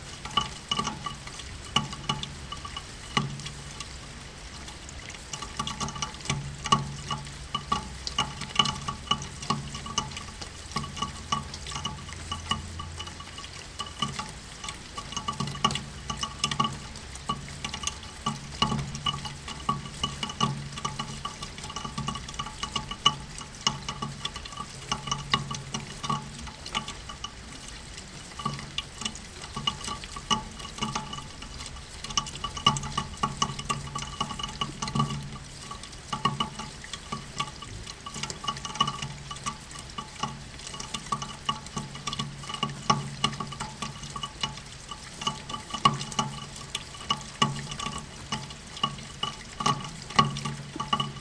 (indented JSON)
{
  "title": "backyard - backyard, rain drops in eaves gutter (mic very close)",
  "date": "2008-08-10 17:15:00",
  "description": "10.08.2008 17:15 closeup",
  "latitude": "52.49",
  "longitude": "13.42",
  "altitude": "45",
  "timezone": "Europe/Berlin"
}